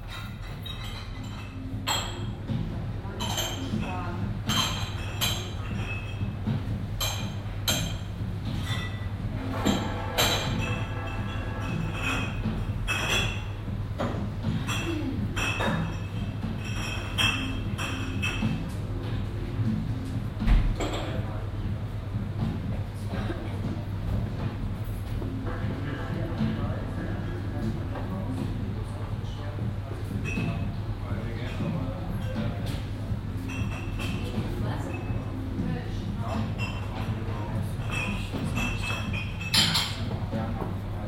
Berlin, Möbel Olfe - Möbel Olfe: Ouverture, Sonntag 15.07, 21:45
abend, sommerliche stimmung, das möbel olfe öffnet gerade, platz an der tür, klänge von innen und aussen
evening, summer, pub opens, at the door, sounds from in and outdoors